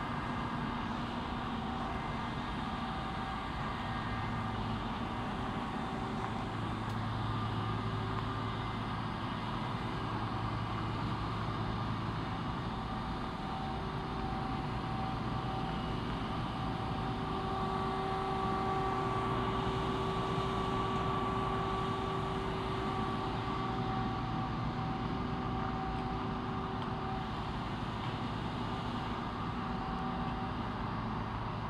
Alyth - Bonnybrook - Manchester, Calgary, AB, Canada - Industrial district